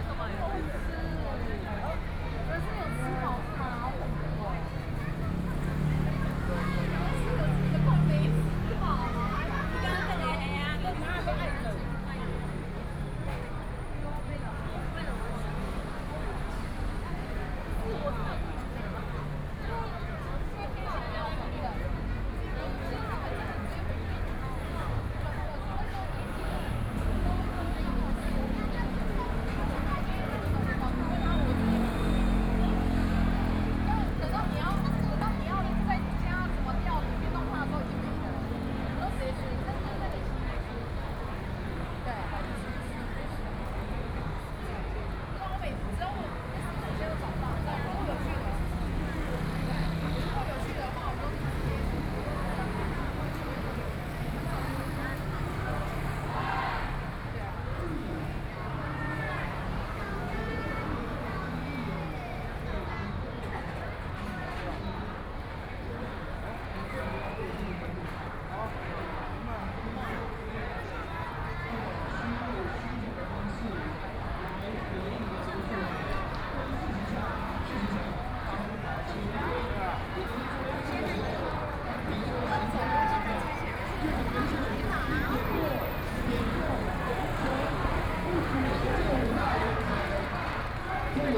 Zhongzheng Rd., Tamsui District - At the intersection
Many tourists, The distance protests, Traffic Sound
Please turn up the volume a little. Binaural recordings, Sony PCM D100+ Soundman OKM II
New Taipei City, Taiwan, 5 April 2014